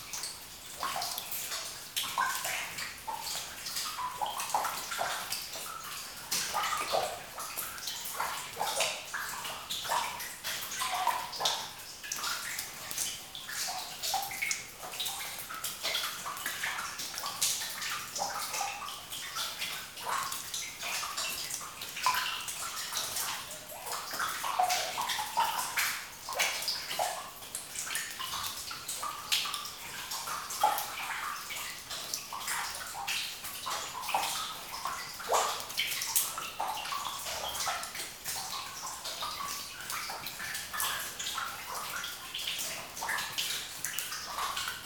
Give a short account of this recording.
In a two levels pit between the grey level and the red level (coulours of stones), the sound of water.